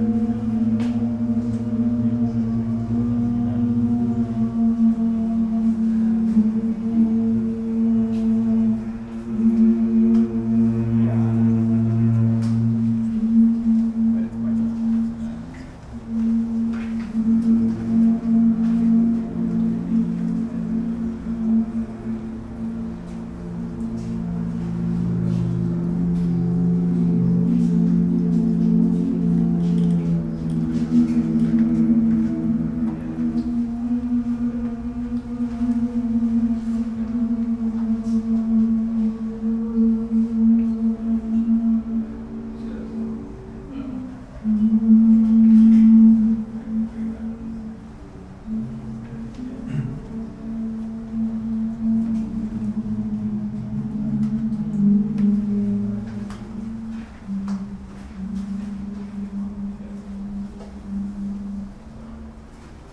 singing in the Chromatico sculpture, Tallinn
singing in the Chromatico sculpture by lukas Kuhne